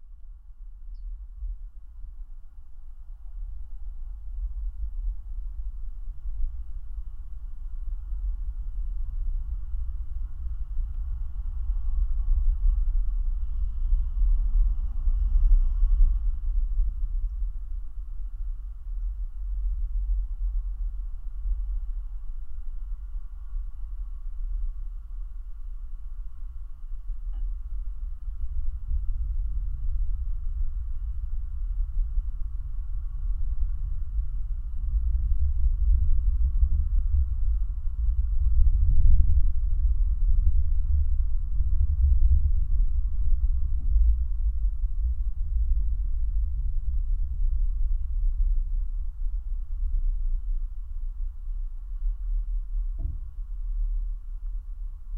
Atkočiškės, Lithuania, abandoned swing
former children house and some abandoned metallic swings from soviet era. I placed contact mics on the chaind holding the chair. absolutelly windless evening. but still - the microphes are immersing us into this silence of the object that is no silent...LOW FREQUENCIES
August 2018